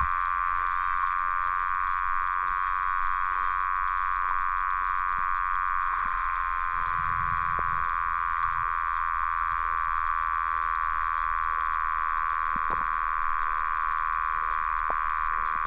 Underwater sounds in lake Kermezys. Intensity varies depending from the sun intensity.
Utenos apskritis, Lietuva